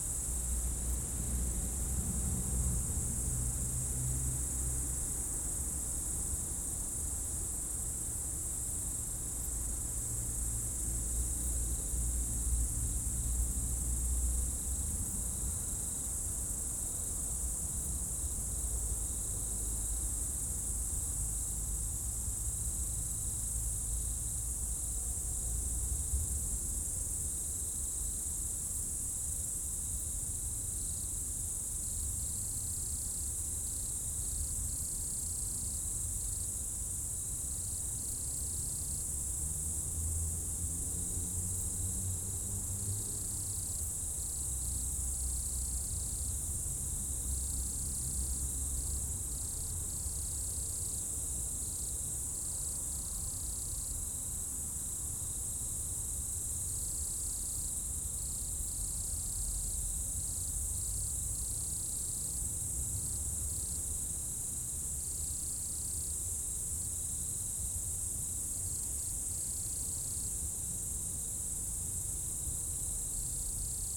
route col du Chat, Bourdeau, France - Tutti insectes
Dans la première épingle de la route du col du Chat à Bourdeau, insectes dans le talus et les arbres au crépuscule, avion, quelques véhicules. Enregistreur Tascam DAP1 DAT, extrait d'un CDR gravé en 2006.